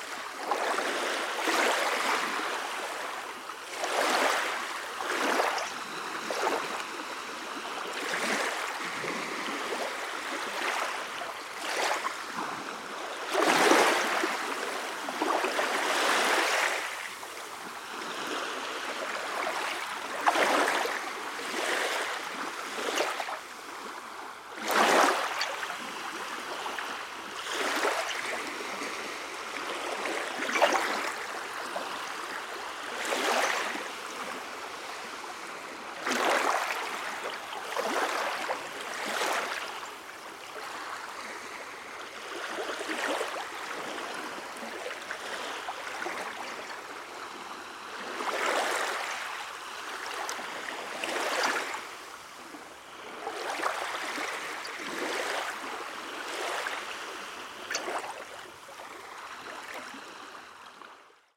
waves of Nida, binaural sea
binaural recording of soft sea waves
16 November, 13:28